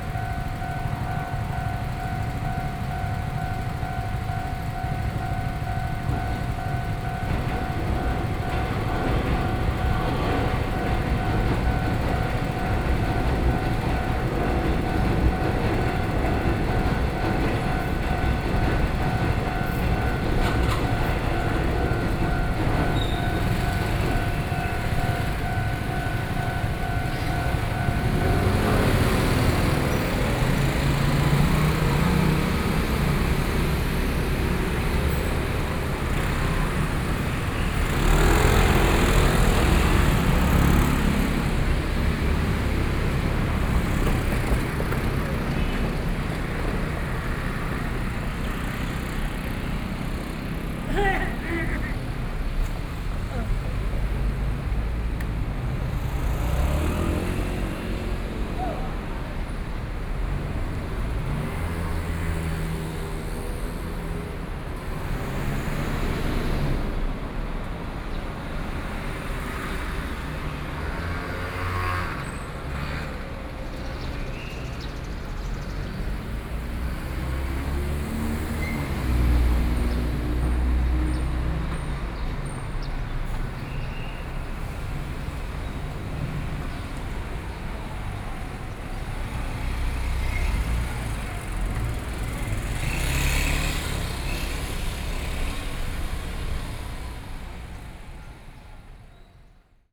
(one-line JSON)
{"title": "東港陸橋, Yilan City - Railroad crossing", "date": "2014-07-05 10:08:00", "description": "in the Railroad crossing, Traffic Sound, Hot weather, Traveling by train\nSony PCM D50+ Soundman OKM II", "latitude": "24.76", "longitude": "121.76", "altitude": "12", "timezone": "Asia/Taipei"}